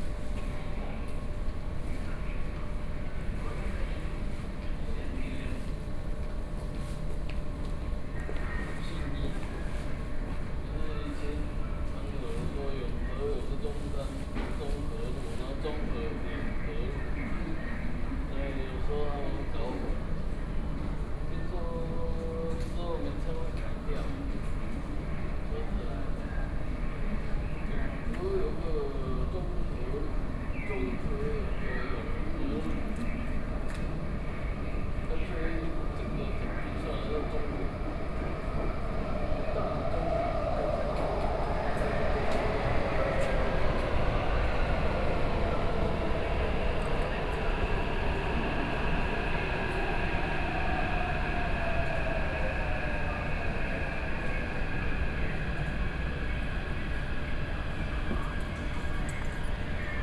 Touqianzhuang Station - Island platform
in the Touqianzhuang Station platform, Sony PCM D50 + Soundman OKM II